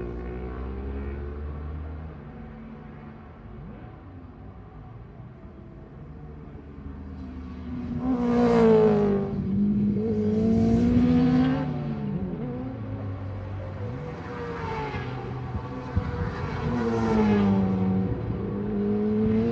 British Superbikes 2005 ... Superbikes(contd) ... Cadwell Park ... one point stereo mic to minidisk ...
England, United Kingdom, 27 August 2005